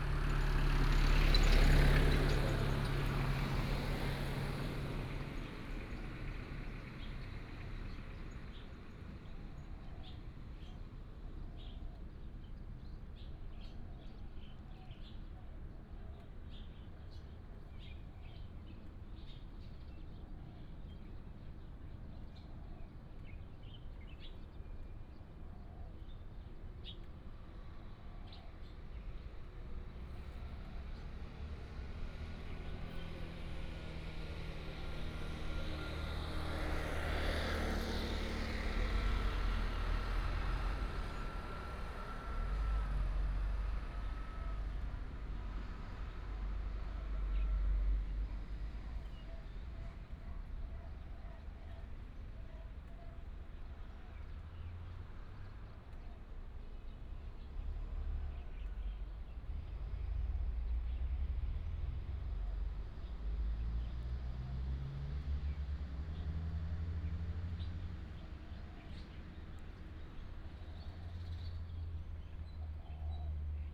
Lufeng Rd., 田尾鄉陸豐村 - in the roadside
Traffic sound, sound of the birds
Tianwei Township, Changhua County, Taiwan, 6 April 2017